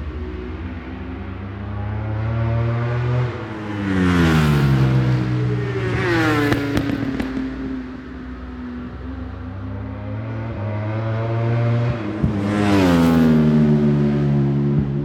wsb 2004 ... superbike practice ... one point stereo mic to minidisk ... time approx ...